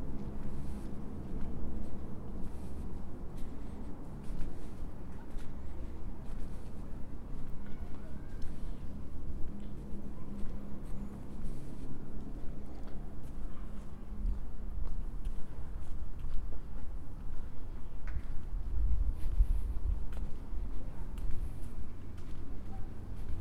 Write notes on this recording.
Capturing the sounds down Chatsworth Road through to Elderfield Road